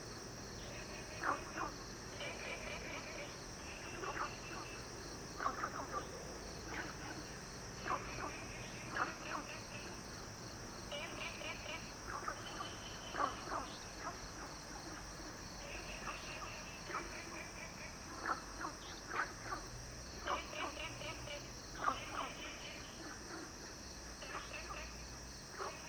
TaoMi River, Puli Township - Frogs sound
Dogs barking, Frogs chirping
Zoom H2n MS+XY